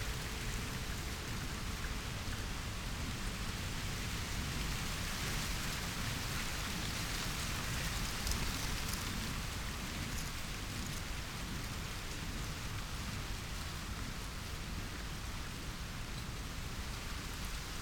Green Ln, Malton, UK - in a field of maize ...
in a field of maize ... pre-amped mics in a SASS ... distant bird calls from carrion crow ... red-legged partridge ... the maize plants are dessicated and dead ... the plants are you used as cover for game birds ... pheasant ... red-legged partridge ... in the next few weeks the crop will be ploughed in ...